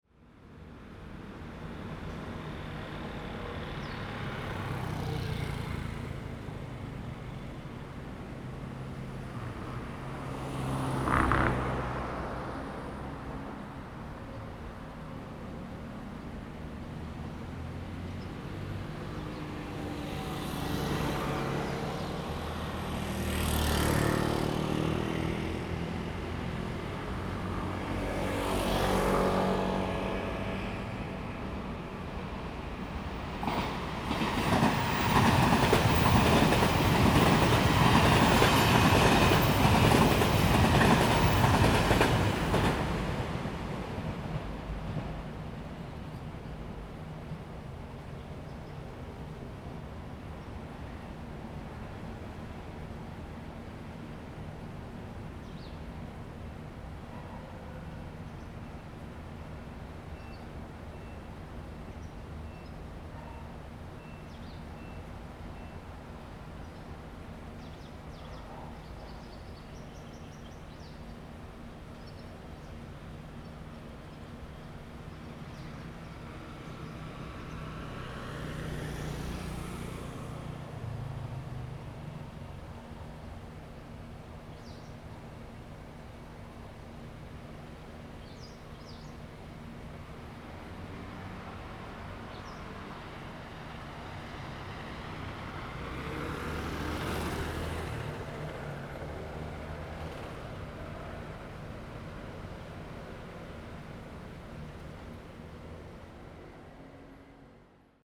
Next to the railway, Traffic sound, The train runs through
Zoom H2n MS+XY
Changhua County, Taiwan, 15 February 2017